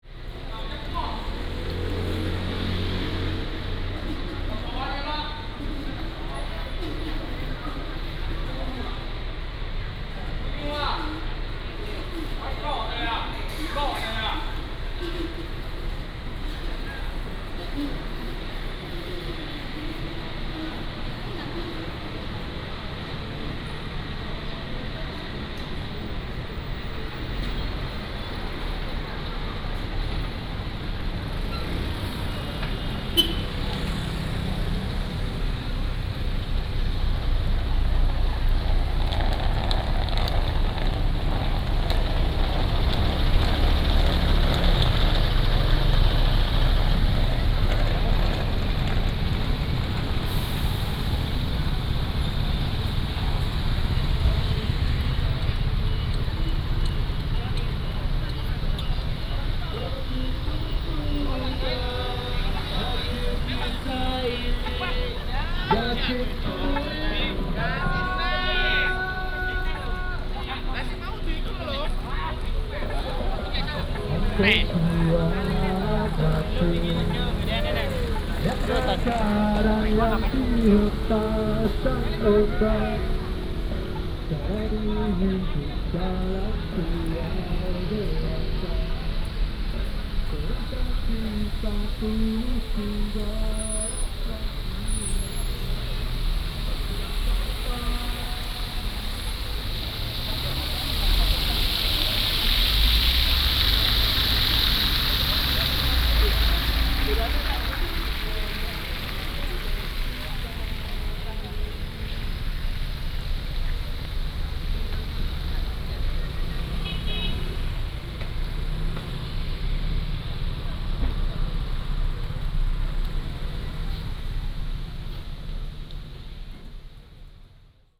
Sec., Zhongzheng Rd., Changhua City - Walking in the square
Walking in the square in front of the station, Traffic sound, fountain